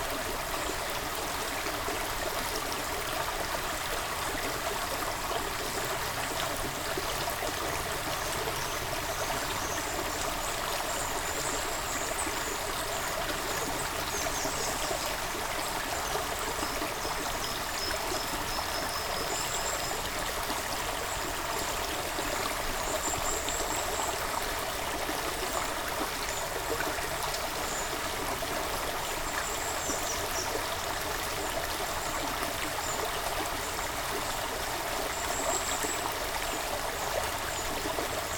Oigny, France - Seine river
Into the forest, the Seine river is flowing in a very bucolic landscape. A lot of Grey Wagtail are flying and singing. It's a discreet sharp shout, always near the water or over the river.